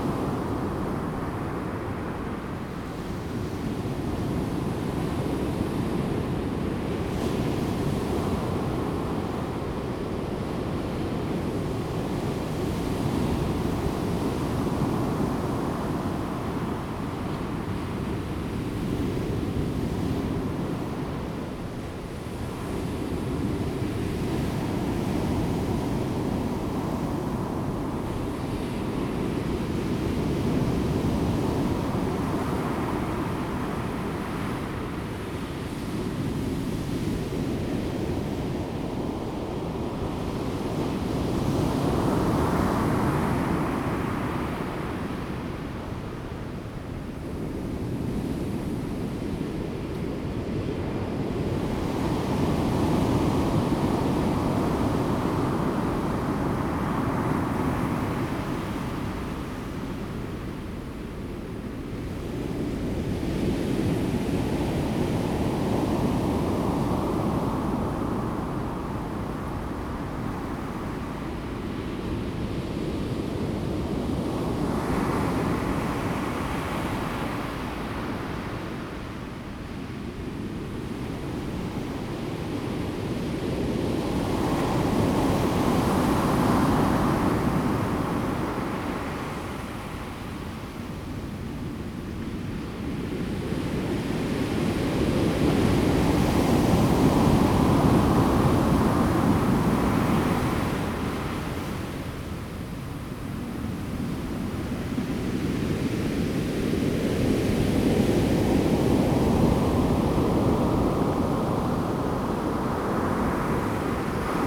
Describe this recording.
At the beach, Sound of the waves, Zoom H2n MS+XY